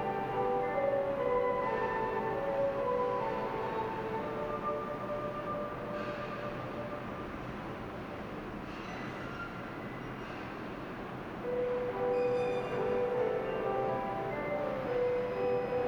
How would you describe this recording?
Hyundai Department Store, VIP lounge, an announcement for employees. 현대백화점 VIP라운지, 직원 안내방송